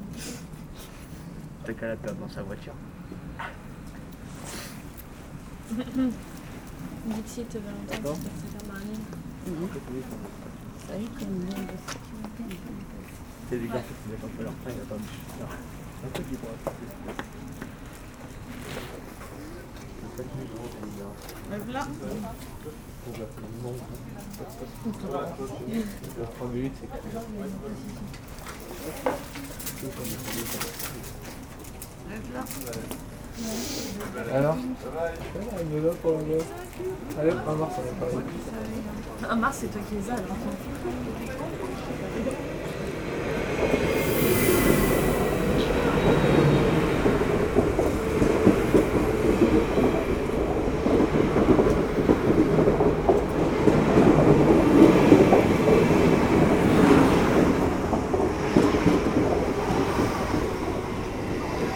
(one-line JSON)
{"title": "Maintenon, France - Maintenon station", "date": "2016-12-27 11:23:00", "description": "People are discussing on the platform, one gives explanations about how he made his christmas gift hidden, as it was so much uggly. The train to Paris arrives and a few time later, leaves the platform.", "latitude": "48.59", "longitude": "1.59", "altitude": "122", "timezone": "GMT+1"}